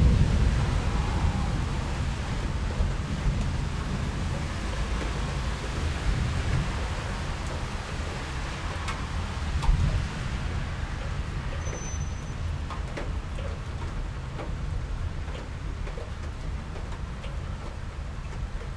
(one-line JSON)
{"title": "Irmingersgade (Blegdamsvej), København, Danmark - Sound of rain and thunder.", "date": "2022-07-12 15:10:00", "description": "Sound of rain on the balcony and the sound of thunder and sound of traffic on wet asphalt in the background.\nRecorded with zoom H6 and Rode ntg3. Øivind Weingaarde.", "latitude": "55.70", "longitude": "12.57", "altitude": "13", "timezone": "Europe/Copenhagen"}